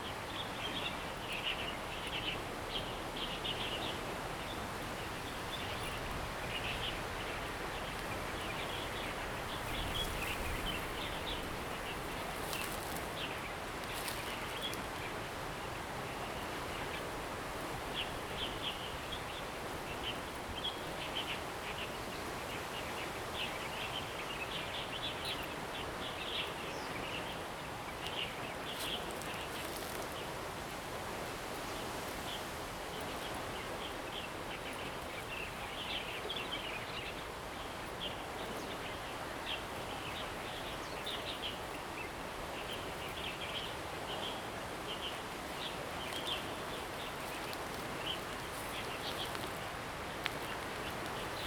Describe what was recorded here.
Stream flow sound, Birds singing, Zoom H2n MS+XY